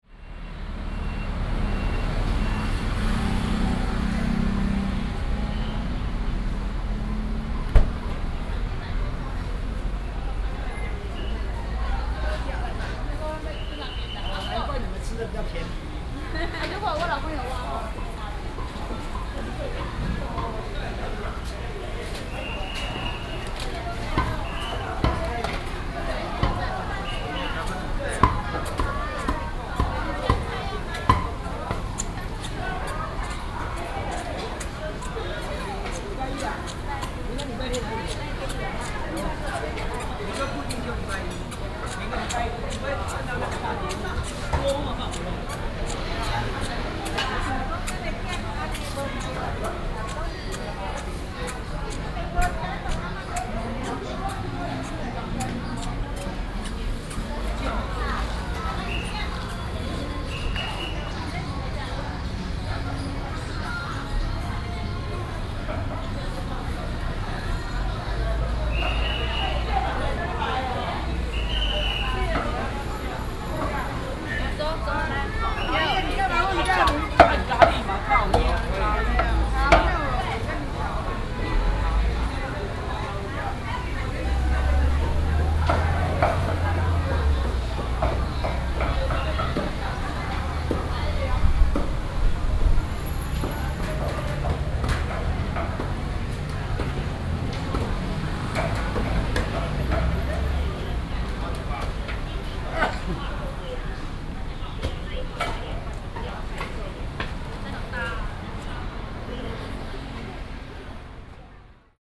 營盛黃昏市場, Guishan Dist. - evening market
Traditional market, traffic sound